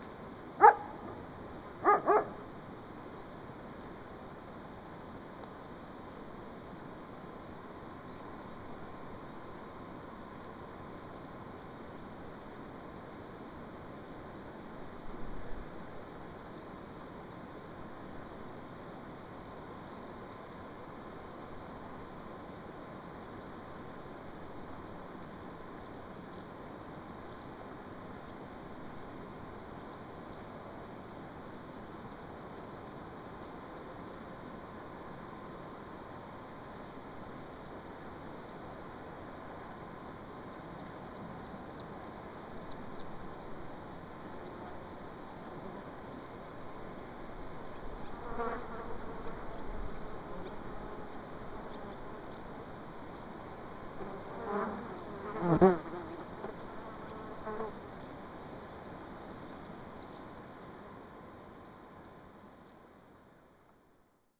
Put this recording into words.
silent village day as heard from Tauragnai mound